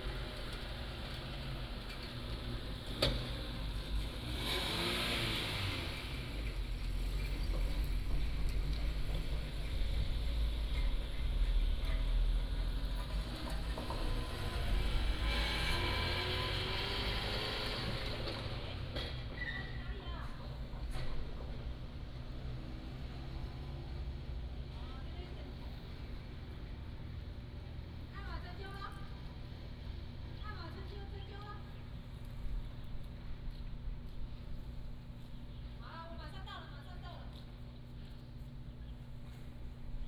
Beigan Township, Matsu Islands - Next to the airport
Next to the airport